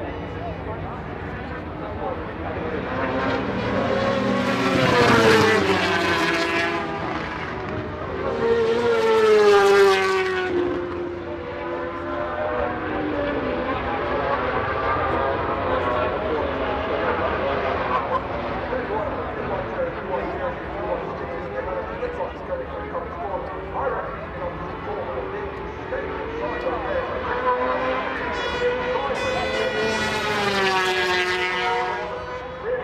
Castle Donington, UK - British Motorcycle Grand Prix 2003 ... moto grandprix ...
Race ... part one ...Starkeys ... Donington Park ... mixture off 990cc four stroke and 500cc two strokes ...